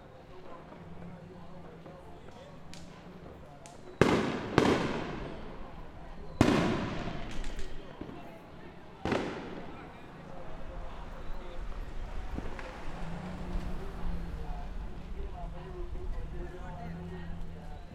Ridgewood, Queens - 4th of Juy Celebration in Ridgewood, Queens
This recording is a soundwalk around the Ridgewood, Queens neighbourhood during the celebration of the 4th of July 2017. Lots of families gathered in the streets having barbecues and throwing fireworks. In each corner of the neighboorhood hundreds of small fireworks were bursting just above our heads. Ridgewood sounded like a war zone if it were not for the laughing and enthusiasm of everyone celebrating.
Recorded with Zoom H6
Carlo Patrão
NY, USA, 4 July 2017, 21:00